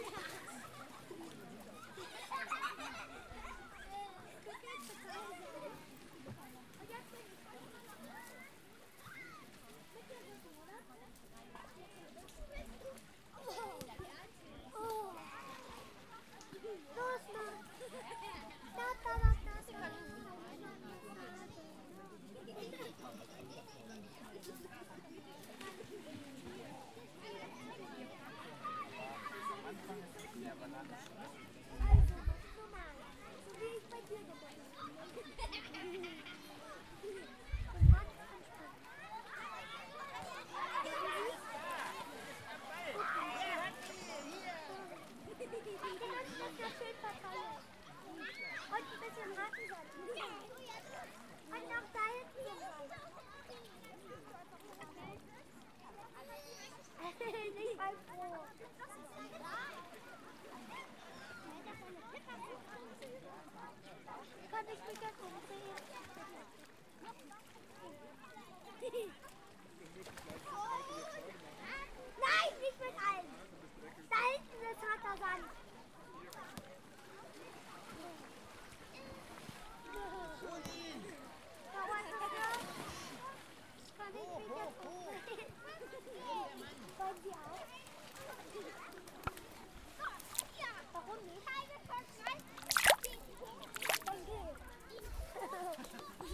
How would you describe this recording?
Zoom H4n, 90° - recorded late afternoon by Stechlinsee as people played in the lake